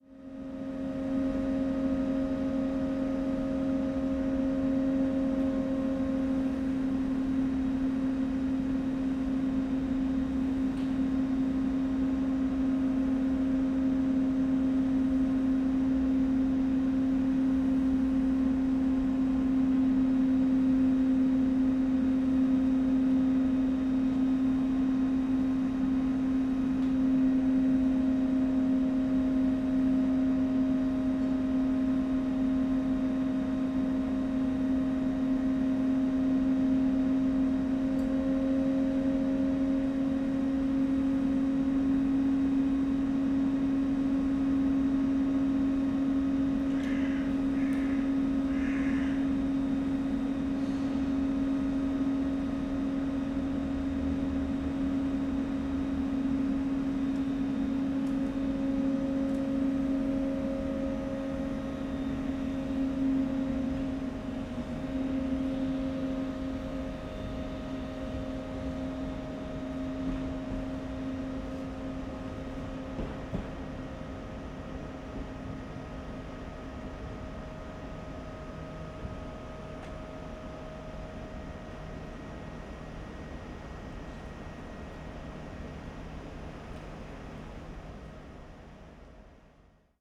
the recordist has to wait 2h for departure at the slovenian border, listening to two trains ideling at Spielfeld station, intersecting hum until one train leaves.
(SD702 Audio Technica BP4025)
Spielfeld, Austria, 30 July 2012